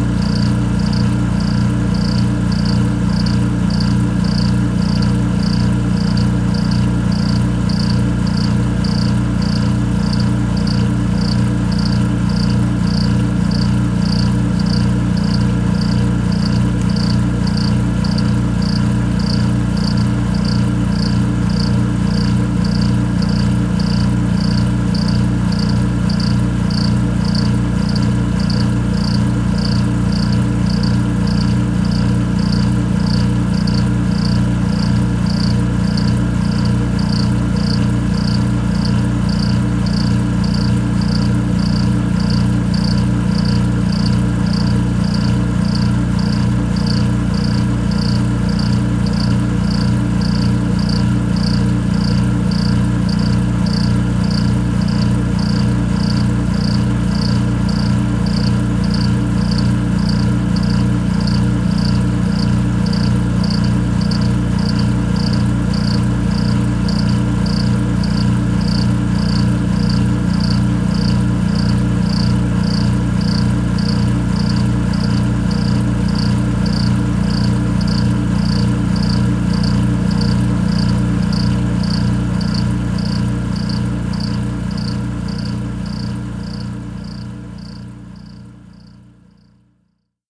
{
  "title": "Up close and personal with The Cricket Machine, Houston, Texas - air compressor at sally's",
  "date": "2012-09-16 19:30:00",
  "description": "This is the air compressor which can be heard in the background in \"Rain, Cicadas and the Cricket Machine\". It drives an aerator in the lake, to keep it from getting stagnant. Although it's annoying that it runs out in the open for all to hear, 24/7; I liked it more and more as I continued to listen.\nCA-14 omnis > DR100 MK2",
  "latitude": "29.76",
  "longitude": "-95.61",
  "altitude": "31",
  "timezone": "America/Chicago"
}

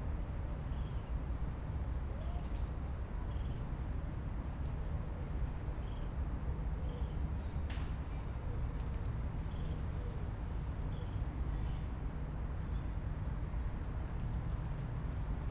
{
  "title": "Musée Albert-Kahn, Rue du Port, Boulogne-Billancourt, France - Albert-Kahn's Garden 1",
  "date": "2014-07-31 17:00:00",
  "description": "recorded w/ zoom H4n",
  "latitude": "48.84",
  "longitude": "2.23",
  "altitude": "33",
  "timezone": "Europe/Paris"
}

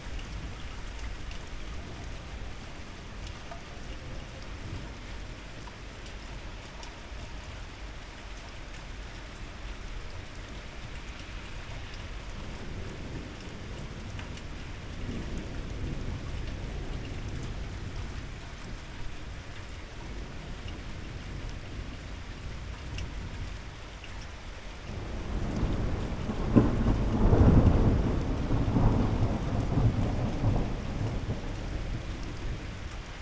{
  "title": "Köln, Maastrichter Str., backyard balcony - thunderstorm",
  "date": "2018-05-16 19:25:00",
  "description": "Köln, Maastrichter Str., backyard balcony, moderate thunderstorm in spring\n(Sony PCM D50, DPA4060)",
  "latitude": "50.94",
  "longitude": "6.93",
  "altitude": "57",
  "timezone": "Europe/Berlin"
}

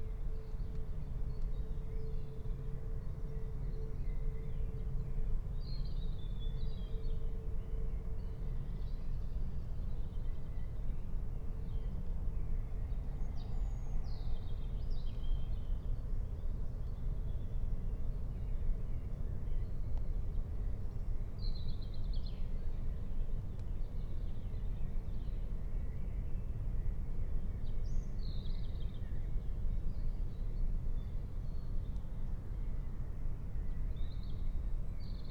{
  "date": "2021-04-18 04:45:00",
  "description": "04:45 Berlin, Königsheide, Teich - pond ambience",
  "latitude": "52.45",
  "longitude": "13.49",
  "altitude": "38",
  "timezone": "Europe/Berlin"
}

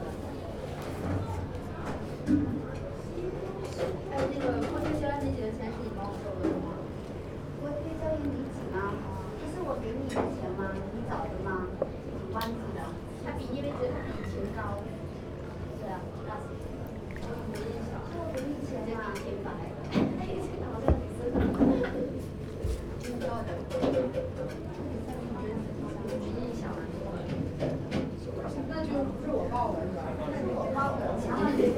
{
  "title": "neoscenes: hot and sour dumplings",
  "latitude": "-33.88",
  "longitude": "151.20",
  "altitude": "29",
  "timezone": "Europe/Berlin"
}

